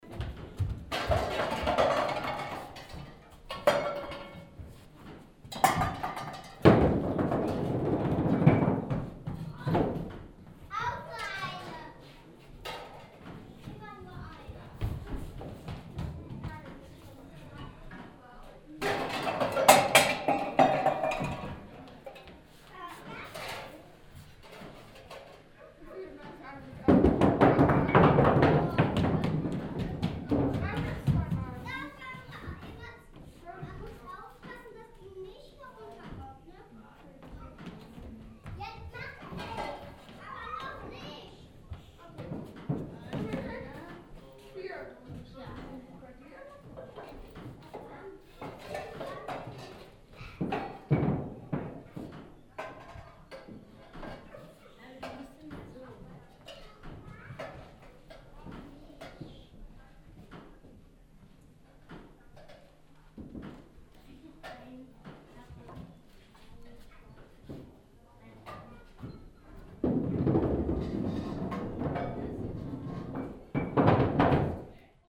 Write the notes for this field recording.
a second recording of the same location, soundmpa nrw - social ambiences and topographic field recordings